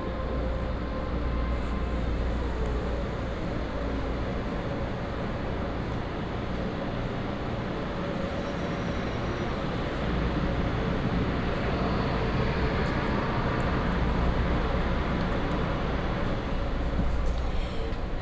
At the station platform, The train arrives